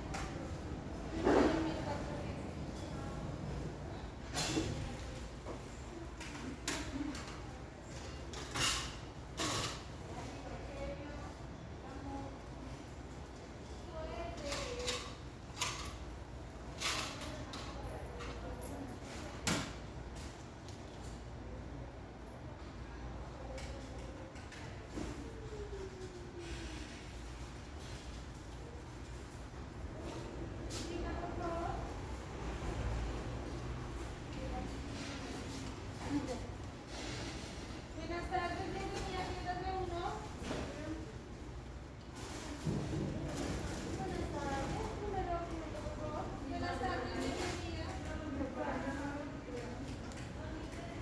Cl., Bogotá, Colombia - MARKET D1 - STREET 166 - TUESDAY 4:30PM
Market D1, in the afternoon. You can hear the sound of the cash registrer, people talking and walking, you can occasionally hear the cars passing outside. There are knocks from the organizers of the place in the background. Sound of coins and cash register. The falling of a coin sounds and finally, the sound of packaging.